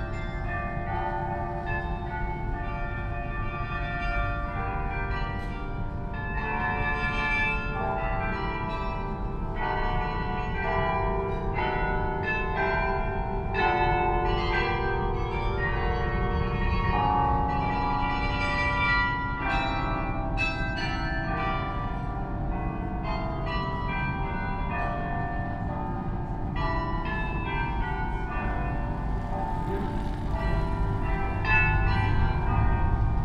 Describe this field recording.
Brugge Belfort Carillon - Bohemian Rhapsody - 2nd October 2019 11:37. Field recording of the Brugge Belfort Carillon performing a rendition of Bohemian Rhapsody. Gear: Sony PCM-M10 built-in mics